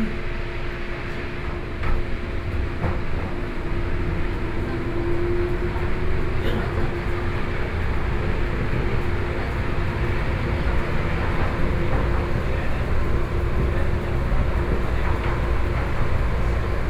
Neihu District, Taipei - Neihu Line (Taipei Metro)
from Xihu Station to Huzhou Station, Binaural recordings, Sony PCM D50 + Soundman OKM II